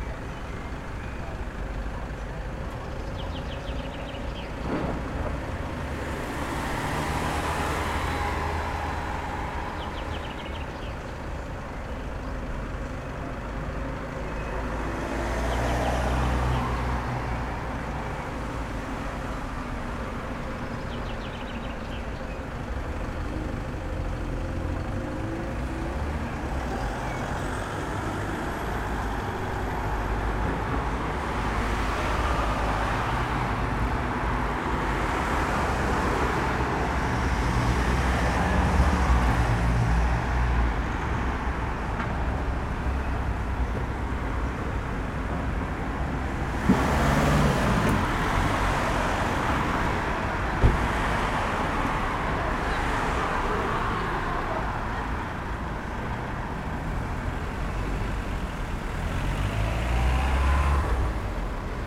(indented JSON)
{
  "title": "Bus Station, Nova Gorica, Slovenia - The sounds of cars on the road",
  "date": "2017-06-06 16:20:00",
  "description": "The recording was made on the bus station overlooking the road.",
  "latitude": "45.96",
  "longitude": "13.65",
  "altitude": "98",
  "timezone": "Europe/Ljubljana"
}